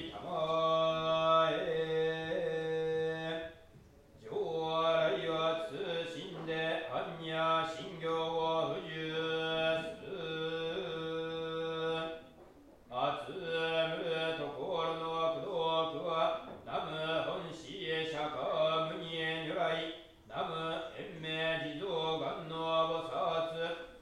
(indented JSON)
{
  "title": "Kamakura Kencho-Ji Ryuo-den",
  "date": "2011-11-18 15:00:00",
  "description": "Chanting and bells, Zen Buddhism class, Ryuo-den hall, Kencho-Ji temple, in Kamakura town. Recorder LS-10",
  "latitude": "35.33",
  "longitude": "139.56",
  "altitude": "48",
  "timezone": "Asia/Tokyo"
}